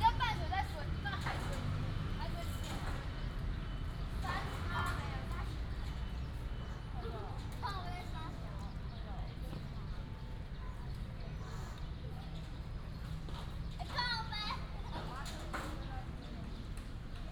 樹德公園, Datong Dist., Taipei City - Walking in the Park
Walking in the Park, Traffic sound, The plane flew through, sound of birds
Taipei City, Taiwan, 9 April 2017, ~17:00